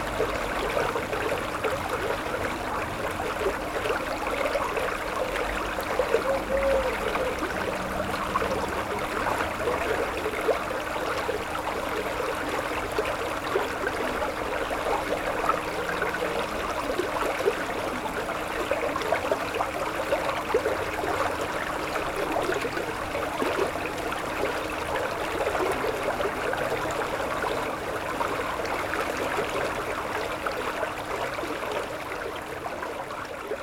Walhain, Belgique - A dog and a river
Sound of the Nil river and a dog barking.
Walhain, Belgium, 7 August